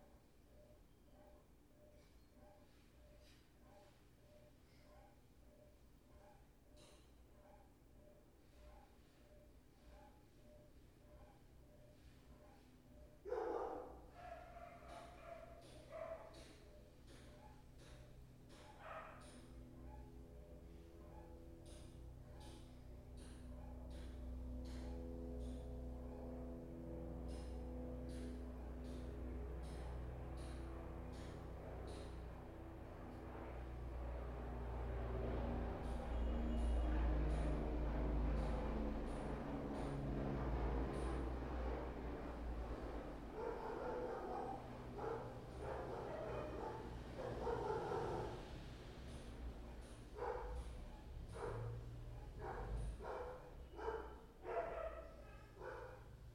R. dos Bancários - Mooca, São Paulo - SP, 03112-070, Brasil - Suburb House
this audio was recorded on a suburb house located on a uncrowded street, the audio intent is build a sound design wich relates a calm house. The audio contains construction tools, washing machine and normal houses sounds.
São Paulo - SP, Brazil, 24 April